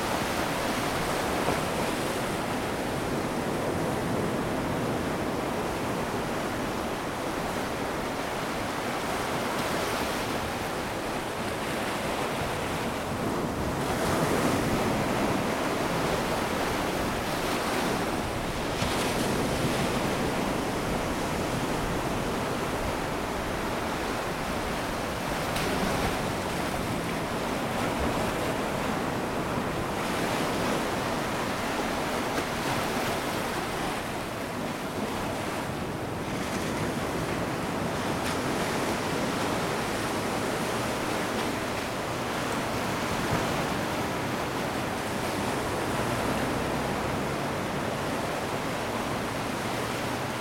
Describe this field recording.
Recording from supports on side of pier of waves breaking on beach.